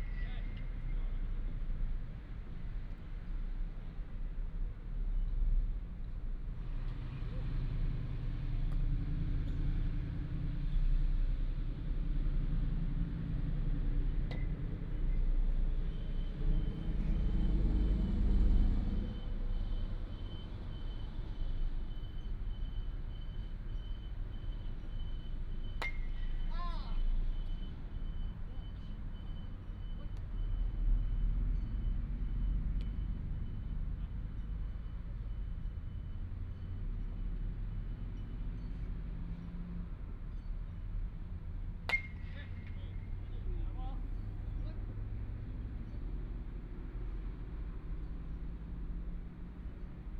National Formosa University, Taiwan - Playing baseball
Playing baseball
Binaural recordings
Sony PCM D100+ Soundman OKM II
Huwei Township, Yunlin County, Taiwan